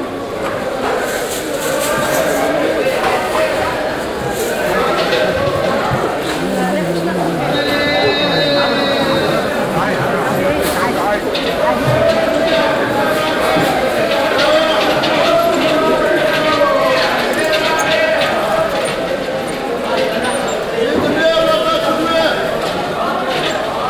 {"title": "Bab Bhar, Tunis, Tunesien - tunis, marche central", "date": "2012-05-03 12:30:00", "description": "Inside the crowded central market hall. The sound of people with plastic bags and fruit traders calling out prices.\ninternational city scapes - social ambiences and topographic field recordings", "latitude": "36.80", "longitude": "10.18", "altitude": "8", "timezone": "Africa/Tunis"}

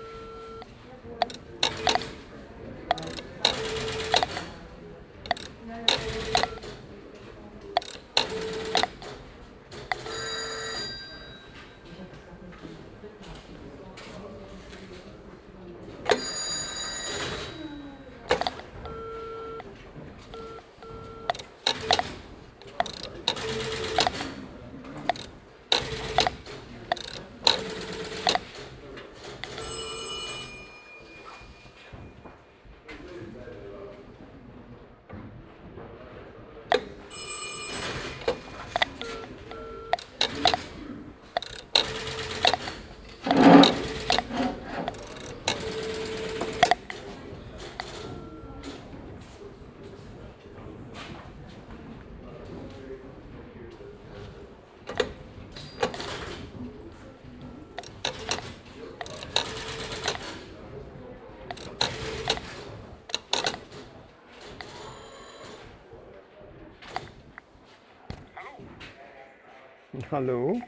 {"title": "Südstadt, Kassel, Deutschland - Kassel, Orangerie, technic museum", "date": "2012-09-13 16:30:00", "description": "Inside the classical Orangerie building at the technic museum. The sounds of old telephones ringing and dialing.\nsoundmap d - social ambiences, art places and topographic field recordings", "latitude": "51.31", "longitude": "9.50", "altitude": "139", "timezone": "Europe/Berlin"}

